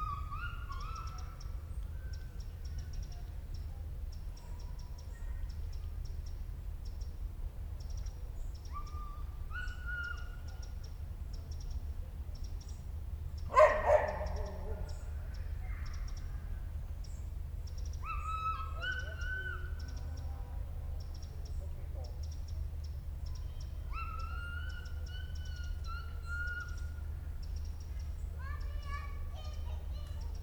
Prague, Czech Republic - Na Cibulce
Soundscape from the park Na Cibulce, water, lake and birds.